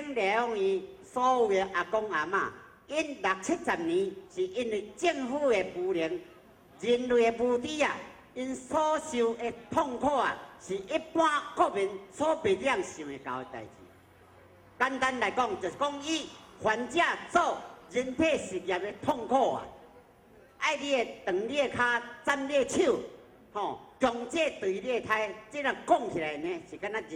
Protest march, Sony ECM-MS907, Sony Hi-MD MZ-RH1